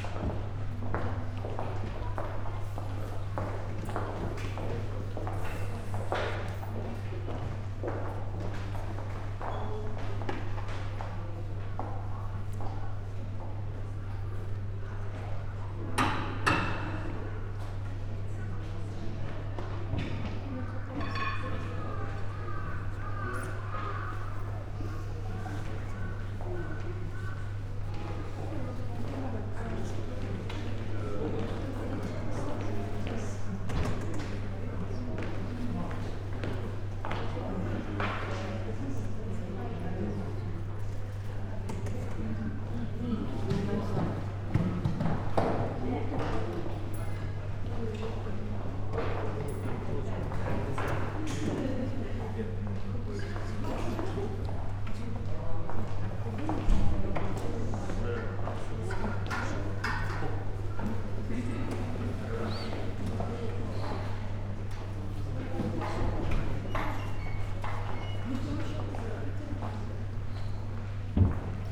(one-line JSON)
{"title": "hase-dera, kamakura, japan - hase-kannon", "date": "2013-11-17 16:42:00", "description": "inside of the temple, whisperings, steps, quietness", "latitude": "35.31", "longitude": "139.53", "altitude": "22", "timezone": "Asia/Tokyo"}